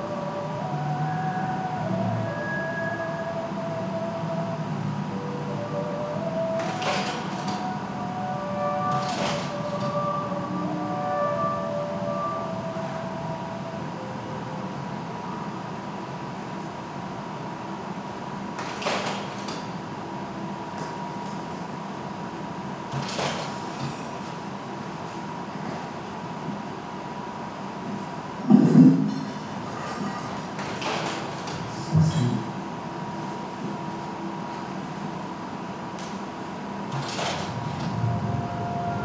two slide projectors at work in an exhibition, and the soundtrack of a video
(PCM D-50)
2 September 2012, 15:00